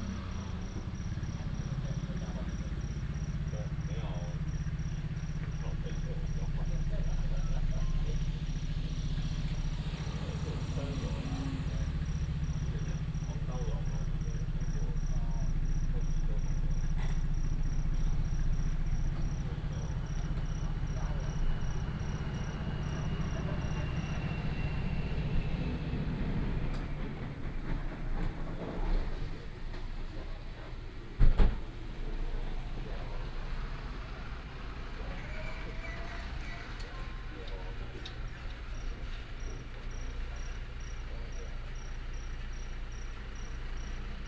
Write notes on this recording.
in convenience store, traffic sound, heavy motorcycle enthusiasts gather here to chat and take a break, Insect beeps, Binaural recordings, Sony PCM D100+ Soundman OKM II